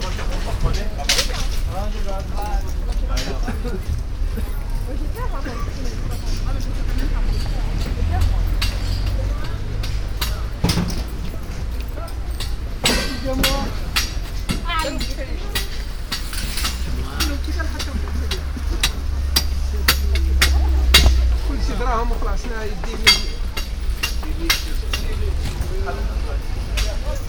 walk thru a big regular outdoor market with all kinds of goods, sold by mostly african french people
international cityscapes - social ambiences and topographic field recordings
Noisiel, France